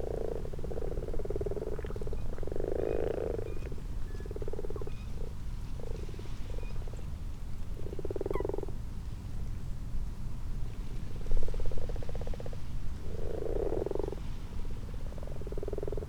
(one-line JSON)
{"title": "Malton, UK - frogs and toads ...", "date": "2022-03-12 23:10:00", "description": "common frogs and common toads ... xlr sass on tripod to zoom h5 ... time edited unattended extended recording ...", "latitude": "54.12", "longitude": "-0.54", "altitude": "77", "timezone": "Europe/London"}